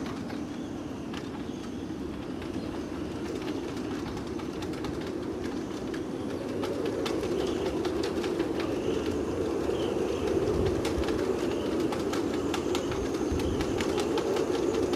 Saint pierre de la reunion
vent fort dans le port de plaisance de saint pierre
29 August 2010